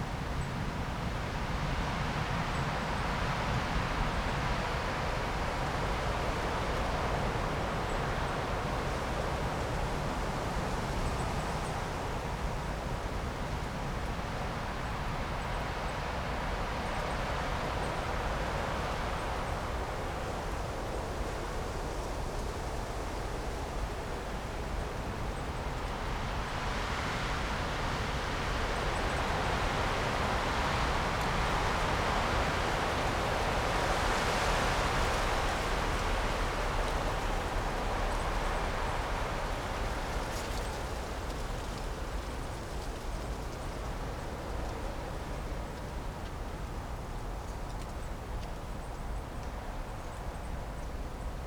Cerje, Miren, Slovenia - Wind
Wind.
Recorded with Sound Devices MixPre3 II and LOM Uši Pro, AB Stereo Mic Technique, 40cm apart.